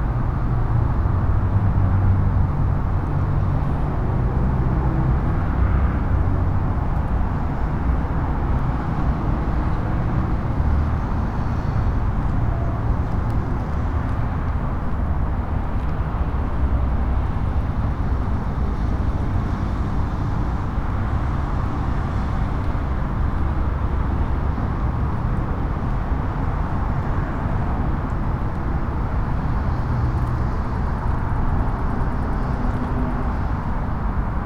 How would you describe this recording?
Walking by Parque de la Mona. I made this recording on december 13th, 2021, at 6:44 p.m. I used a Tascam DR-05X with its built-in microphones and a Tascam WS-11 windshield. Original Recording: Type: Stereo, Esta grabación la hice el 13 de diciembre de 2021 a las 18:44 horas.